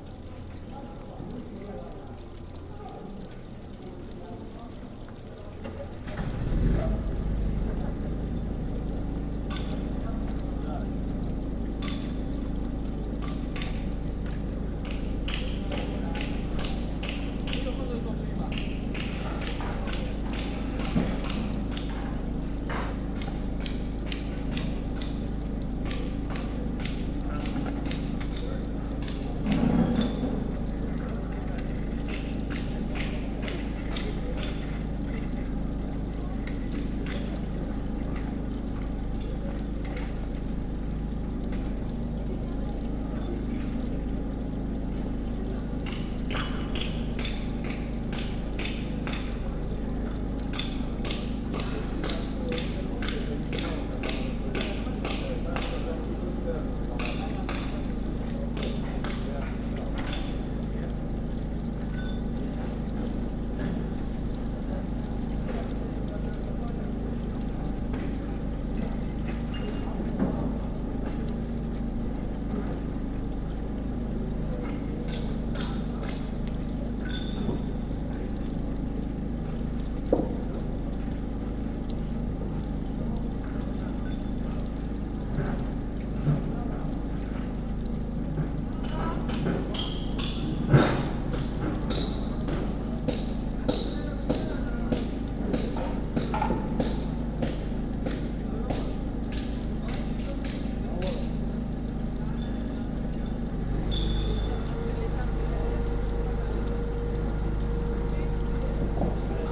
S. Polo, Venezia, Italien - campo s. polo
campo s. polo, s. polo, venezia
Venezia, Italy, 9 October 2015